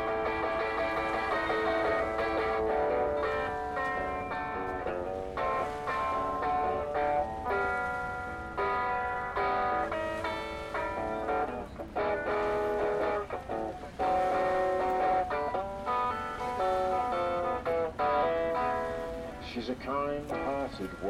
London Borough of Southwark, Greater London, UK - Jam on Thames